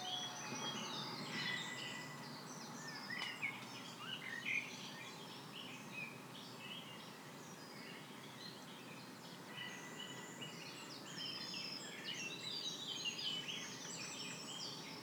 Romsée, Belgium - WLD-2020-Fond du Loup
13 minutes from 5:15 (UTC+2) of dawn chorus. The Fond du Loup is a wooded area on a small stream tributary of the Vesdre river in Chaudfontaine, Belgium. Recorded on a Sony PCM-A10 with a pair of LOM Usi microphones (Primo LM-172).
Road and railroad traffic in the background. Noise of cargo aircrafts taking off Liège (LGG) at 8.3 NM left out.
Liège, Wallonie, België - Belgique - Belgien, July 2020